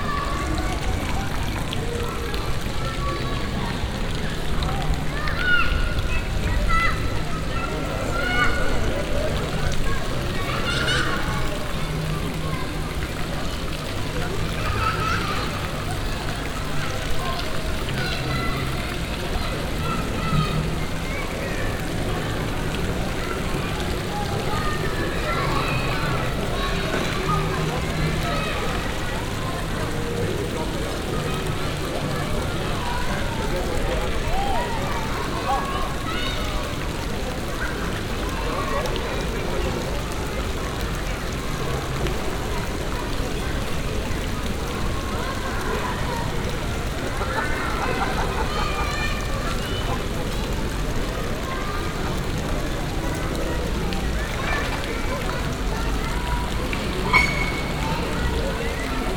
paris, rue brisemiche, stravinsky fountain
the famous jean tinguely - stravinsky fountain recorded in october 2009, unfortunalely half of the fountain objects are not working any more. in the background skater and tourist crowd
international cityscapes - social ambiences and topographic field recordings